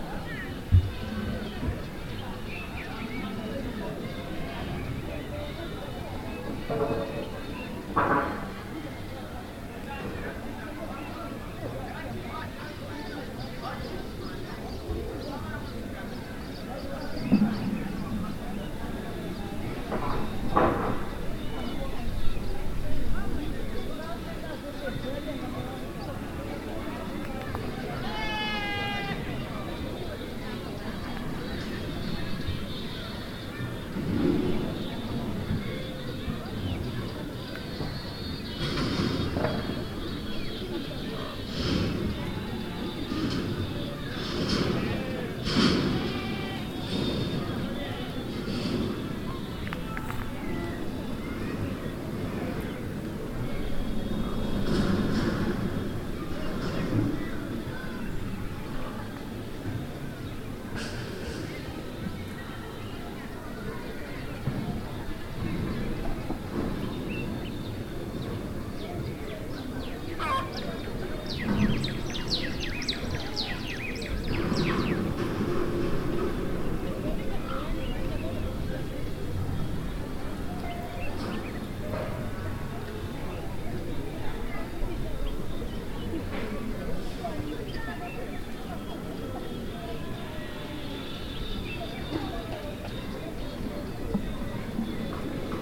Simatelele, Binga, Zimbabwe - Sounds near the school grounds...
… I walked off a bit from the meeting of the women, towards the school… midday sounds from the street, from a nearby borehole, and from the school grounds...